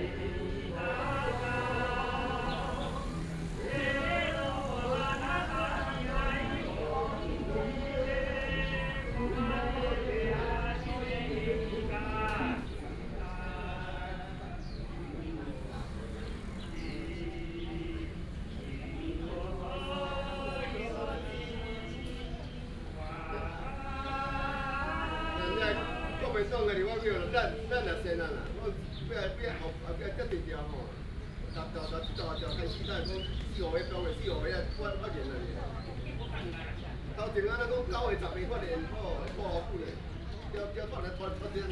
永康公園, Taoyuan City - learning to sing Japanese songs
A group of old people are learning to sing Japanese songs, in the Park, birds sound
Taoyuan City, Taiwan, 27 June 2017, ~07:00